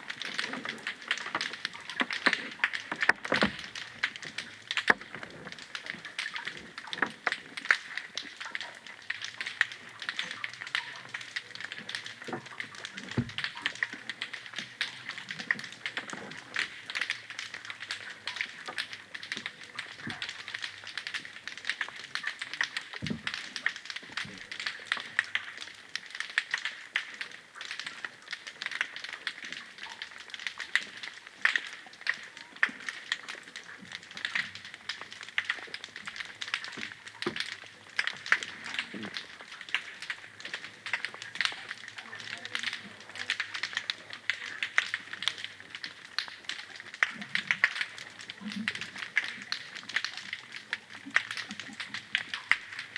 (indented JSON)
{
  "title": "Loch Moidart - Alpheidae (pistol shrimp) Before a Storm",
  "date": "2019-04-07 01:30:00",
  "description": "Recorded with an Aquarian Audio h2a hydrophone and a Sound Devices MixPre-3 (mono)",
  "latitude": "56.79",
  "longitude": "-5.82",
  "altitude": "13",
  "timezone": "Europe/London"
}